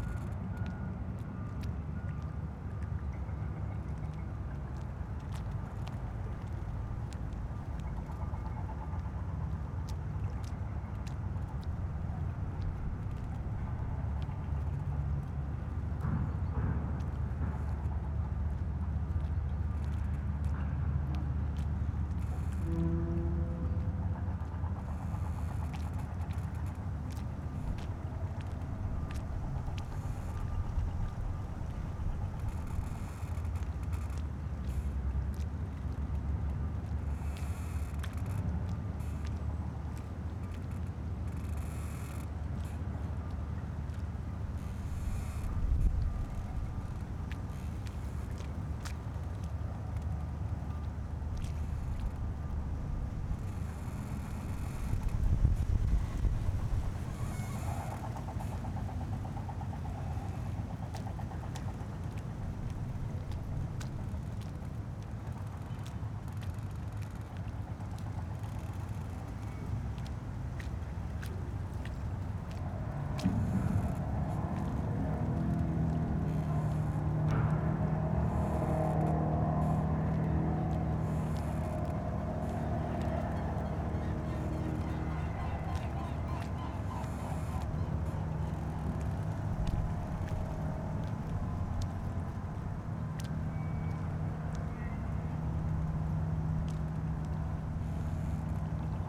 {"title": "Berlin, Plänterwald, Spree - morning soundscape", "date": "2012-03-05 08:30:00", "description": "monday morning industrial soundscape, plenty of different sounds, water, wind, a squeeking tree, sounds of work from the other side of the river. it's very windy this morning.\n(tech note: SD702, rode NT1a 60cm AB)", "latitude": "52.49", "longitude": "13.49", "altitude": "23", "timezone": "Europe/Berlin"}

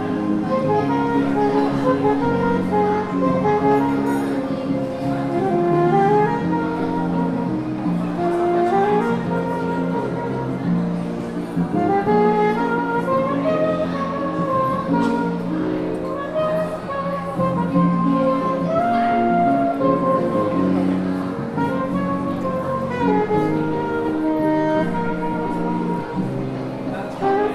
{"title": "Shopping Aricanduva - Avenida Aricanduva - Jardim Marilia, São Paulo - SP, Brasil - Saxofonista e Baixista em um café", "date": "2019-04-06 19:21:00", "description": "Gravação de um saxofonista e um baixista feita de frente a um café no Shopping Leste Aricanduva durante o dia 06/04/2019 das 19:21 às 19:33.\nGravador: Tascam DR-40\nMicrofones: Internos do gravador, abertos em 180º", "latitude": "-23.57", "longitude": "-46.50", "altitude": "757", "timezone": "America/Sao_Paulo"}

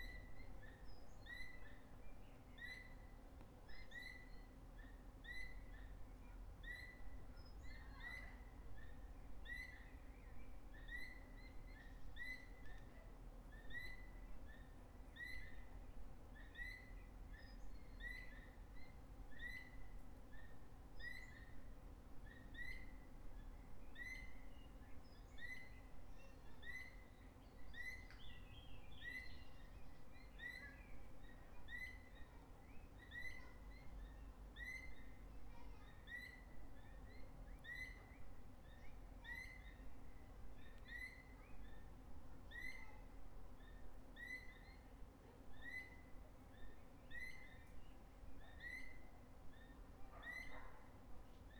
{"title": "Bois, Chemin du Calvaire, Antibes, France - Forest birds & plane", "date": "2014-05-04 14:02:00", "description": "In a forest on the way to the Eglise Notre Dame de la Garoupe. At the start you can hear a plane overhead and throughout the track two birds calling to each other. One of the birds stops calling but the other continues consistently. You can also hear walkers, other birds, and more planes.\nRecorded on ZOOM H1.", "latitude": "43.57", "longitude": "7.13", "altitude": "41", "timezone": "Europe/Paris"}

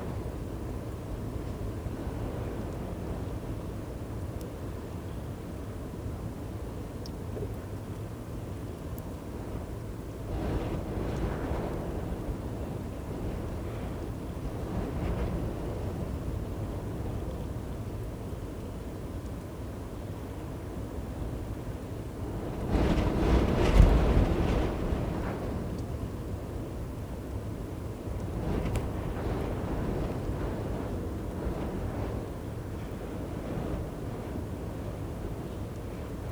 {"title": "Queens, NS, Canada - Distant harbour Seals calling amongst the wind and waves", "date": "2015-10-11 13:57:00", "description": "On a cold, stormy day seals call from this offshore rock. Their cries gust in the far distance and the wind. The ragged shoreline of the Kejimkujik National Park is very beautiful. Behind the low plants and small trees are in full autumn colours, reds, browns, purple, yellows and oranges.", "latitude": "43.83", "longitude": "-64.84", "altitude": "4", "timezone": "America/Halifax"}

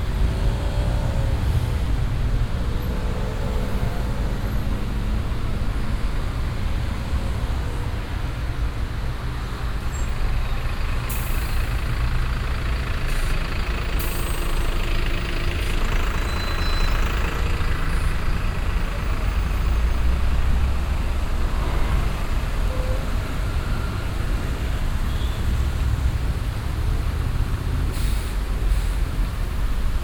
{"title": "paris, quai de la megisserie, traffic", "description": "dense traffic on midday around a place for a monument\ncityscapes international - sicaila ambiences and topographic field recordings", "latitude": "48.86", "longitude": "2.35", "altitude": "38", "timezone": "Europe/Berlin"}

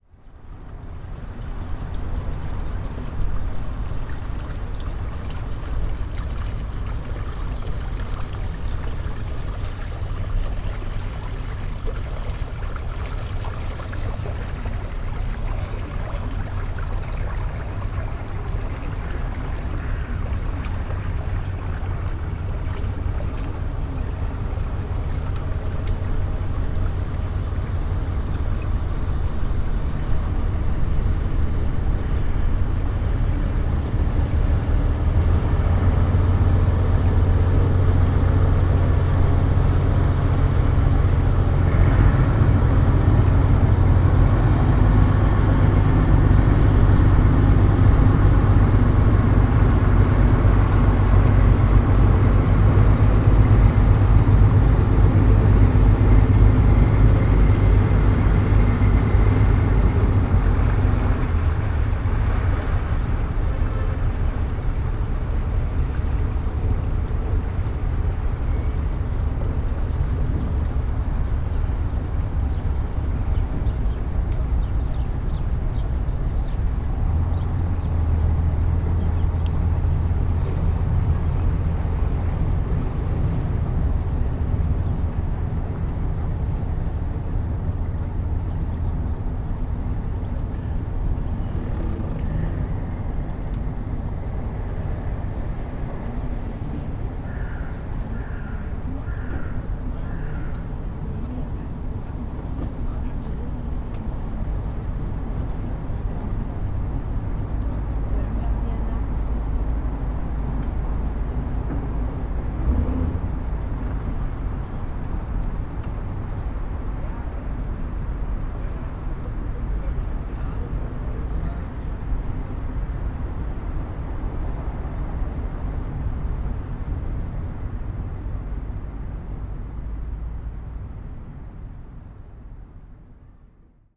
{"title": "Alt-Köpenick, Berlin, Germany - Dahme Spree", "date": "2021-11-14 13:00:00", "description": "Sitting on the riverside of the Schlossinsel with a boat coming from the Dahme into the Spree", "latitude": "52.44", "longitude": "13.57", "altitude": "37", "timezone": "Europe/Berlin"}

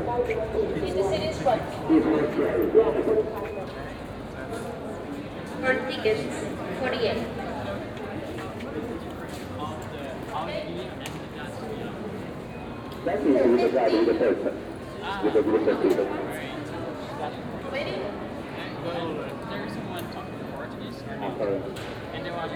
{"title": "Rome, Colosseum - ticket counters", "date": "2014-09-01 15:35:00", "description": "long line at the ticket counters. assistants talking to visitors via small lo-fi speakers.", "latitude": "41.89", "longitude": "12.49", "altitude": "29", "timezone": "Europe/Rome"}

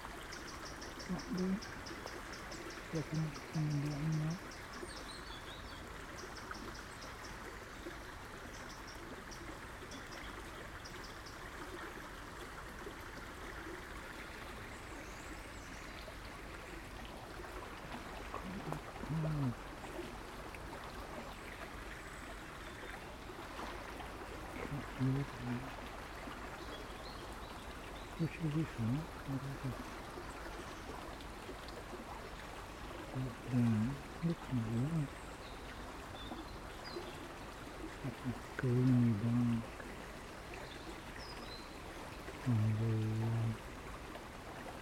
{"title": "Contención Island Day 1 inner northeast - Walking to the sounds of Contención Island: Day 1 Tuesday January 5th", "date": "2021-01-05 14:00:00", "description": "The Drive Moorfield Lodore Road\nIn the Little Dene\ndown by the stream\nwhich is very full after the recent storm\nThe grid at the entrance to the culvert is clogged\nthe water drops about a foot\nthrough the trapped accumulated vegetation and detritus\nA tree has been taken down\nand cut into trunk size roundels", "latitude": "55.00", "longitude": "-1.61", "altitude": "65", "timezone": "Europe/London"}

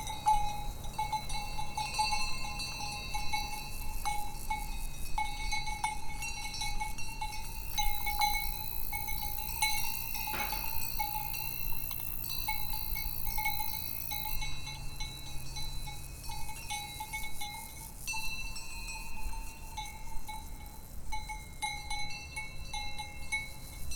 {"title": "Saint-François-de-Sales, France - Quelques cloches de vaches", "date": "2016-08-19 18:30:00", "description": "Quelques vaches dans une prairie, les insectes dans les herbes.", "latitude": "45.70", "longitude": "6.08", "altitude": "701", "timezone": "Europe/Paris"}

